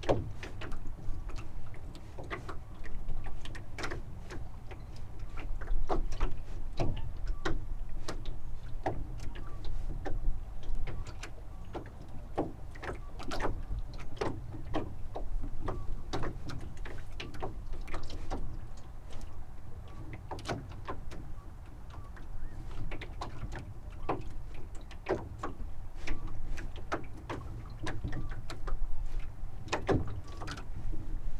Midnight at the canoe pontoon
Recorded on a late night bicycle ride around Chuncheon lake. There is a small canoe hire business where the wooden craft are moored for the night. There was a slight breeze and lake surface was in motion, setting the canoes to knock against each other and the wharf itself. Thanks to the late hour, what is normally a noisy place was relatively free from engine sound. In the distance can be heard a 소쩍새 (Scops owl (?)).
30 May 2018, 23:45